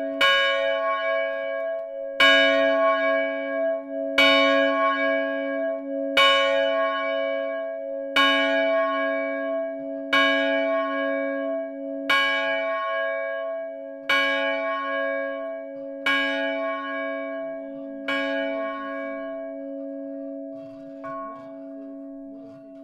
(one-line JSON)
{"title": "Chastre, Belgique - Villeroux bells", "date": "2011-03-13 16:35:00", "description": "The two bells of the small Villeroux church, ringed manually. We are two persons ringing. The first bell is extremely old and extremely poor.", "latitude": "50.60", "longitude": "4.61", "timezone": "Europe/Brussels"}